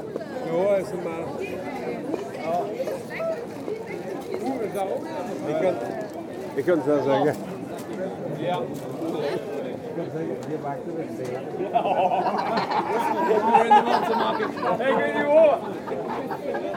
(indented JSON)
{
  "title": "Gent, België - Old center of Ghent",
  "date": "2019-02-16 17:00:00",
  "description": "Very festive atmosphere, from Korenmarkt, Grasbrug and Korenlei. Near Graslei, many people are seated along the canal and for a short while, one could consider that they practice the Danish hygge. Making our way along these cobblestones docks, we can understand the underestimate we feel towards Wallonia.\nsubsection from 0:00 à 9:00 Veldstraat, the main commercial street in Ghent. During an uninterrupted parade of trams, everyone makes their way through in a dense atmosphere. From 9:00 à 12:00 Girl scouts playing on Klein Turkije. From 12:00 à 19:12 A very festive atmosphere in the tourist heart of Ghent, from Korenmarkt to Groentenmarkt, Vleeshuisbrug and Gravensteen. Seller of cuberdons shouting and joking with everybody, hilarious customers, and constantly, trams having great difficulties to manage the curve. This is the representative atmosphere of Ghent, noisy, festive and welcoming. Note : it’s a pleasure to hear only dutch speaking people. In Brugge it was uncommon !",
  "latitude": "51.05",
  "longitude": "3.72",
  "altitude": "8",
  "timezone": "Europe/Brussels"
}